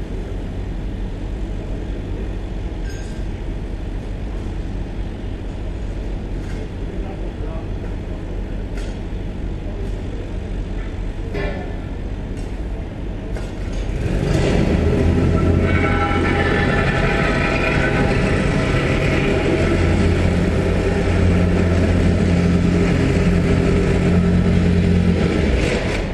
Easter Rd, Edinburgh, UK - Lidl construction site
Lidl construction site, Easter Road, 19th June 2018, recorded from my bedroom window
12 June 2018, 09:48